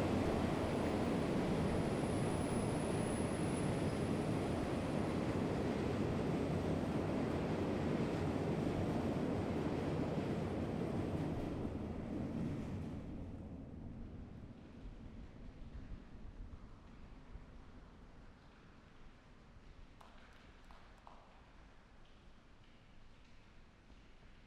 naplavka, Prague, Botic
soundscape under railway bridge where Botic stream enters Vltava river
21 February 2011, 16:15